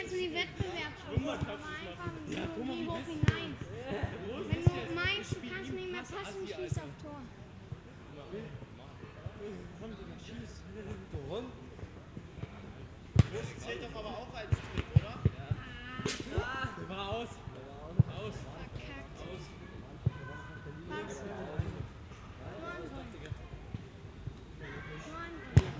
Berlin Gropiusstadt, sports area, youngsters playing soccer, Sunday afternoon, Equinox
(SD702, Audio Technica BP4025)